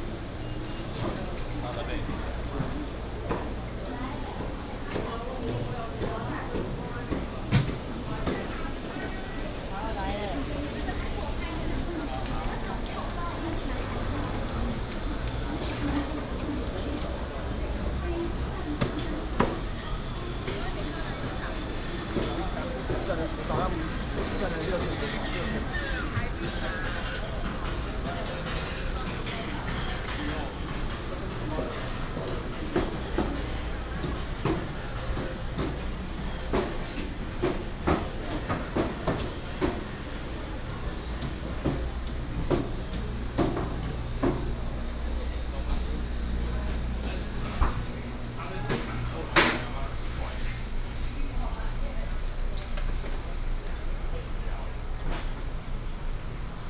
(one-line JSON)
{"title": "大竹黃昏市場, Luzhu Dist., Taoyuan City - evening market", "date": "2017-08-01 16:20:00", "description": "Traditional evening market, traffic sound", "latitude": "25.02", "longitude": "121.26", "altitude": "75", "timezone": "Asia/Taipei"}